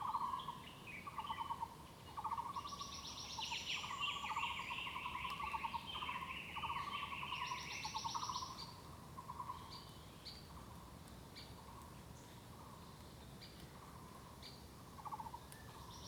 Shuishang Ln., Puli Township 桃米里 - Birds singing
In the woods, birds sound
Zoom H2n MS+XY